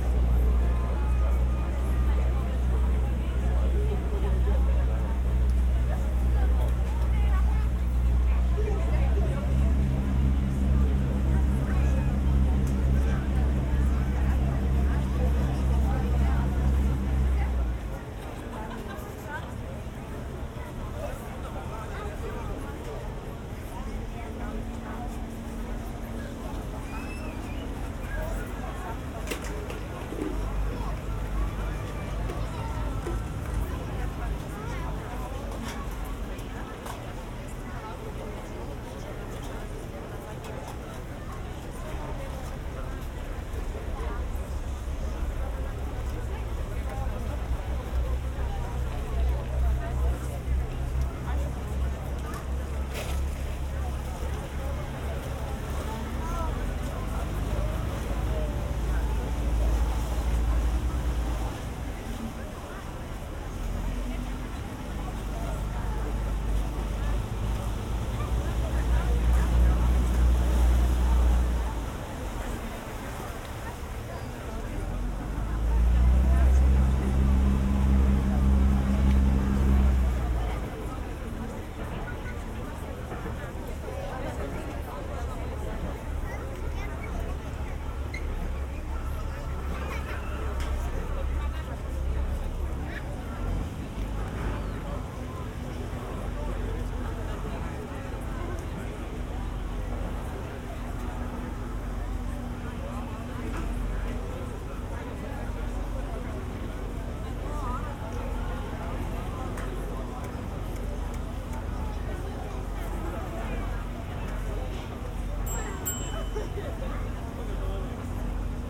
Klaipėda, Lithuania, on a ferry
On a passengers ferry from Klaipeda to Smiltyne. Sennheiser Ambeo headset recording.